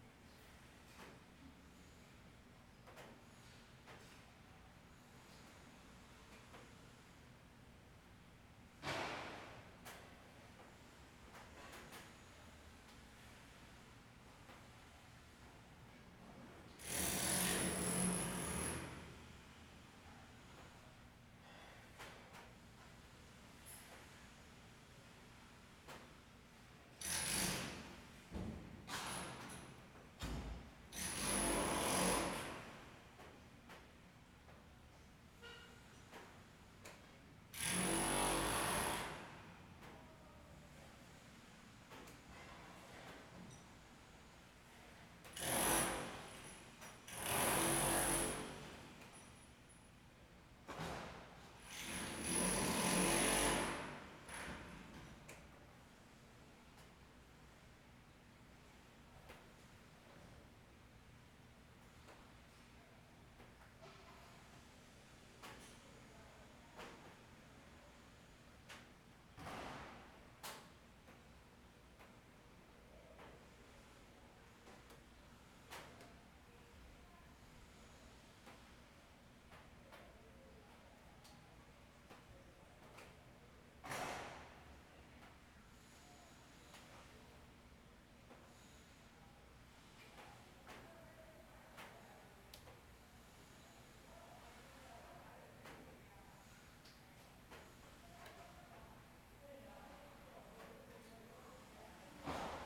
Ln., Sec., Zhongyang N. Rd., Beitou Dist - Construction
Rain, Construction, Traffic Sound, Zoom H6 MS
4 March 2014, ~15:00